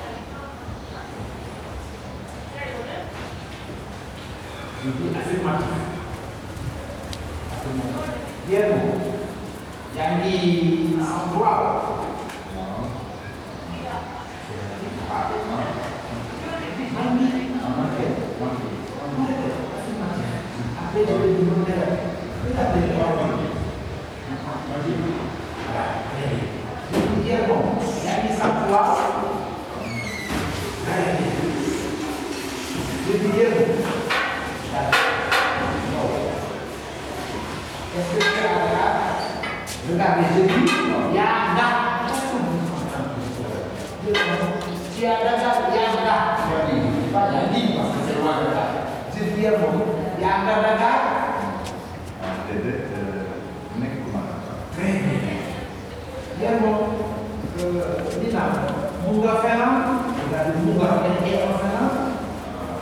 Saint Louis, Senegal - Wolof Language Class
Ambient recording of a Wolof language class at Waaw Centre for Art and Design. Recorded on a Zoom H4 recorder.
Rao, Saint-Louis, Senegal, 10 April 2013